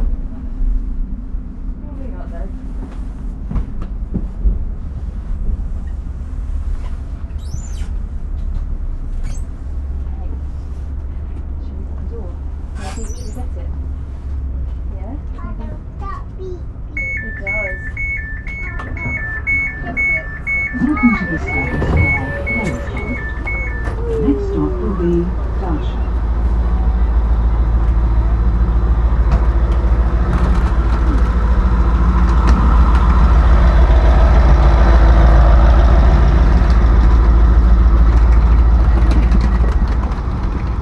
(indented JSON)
{
  "title": "Quiet Train in Suffolk, UK",
  "date": "2022-02-08 13:40:00",
  "description": "Another experiment with long recordings. This one is a local train ride in real time through sleepy Suffolk from Woodbridge to Saxmundham. There are voices, announcements and train sounds ending with passengers leaving the train and suitcases being trundled along the pavement in Saxmundham.\nRecorded with a MixPre 6 II and two Sennheiser MKH 8020s in a rucksack.",
  "latitude": "52.09",
  "longitude": "1.32",
  "altitude": "2",
  "timezone": "Europe/London"
}